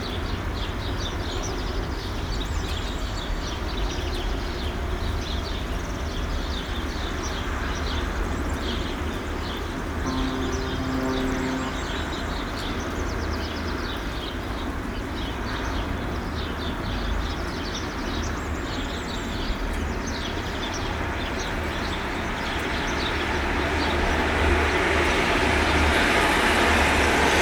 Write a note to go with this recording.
On this particular occasion, recording of the Dawn Chorus was further complicated (or maybe enhanced) by the rain.